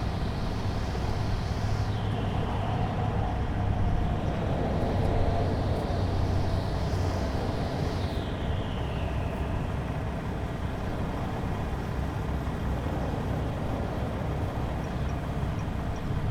neoscenes: I-5 rest area
CA, USA, 28 July 2010